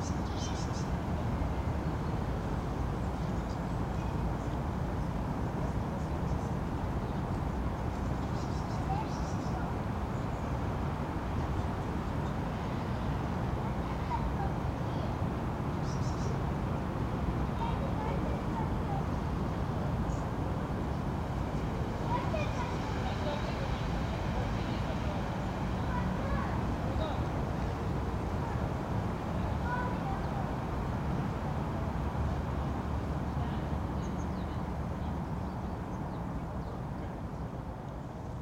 standing on a high exposure to the river Neris. city's drone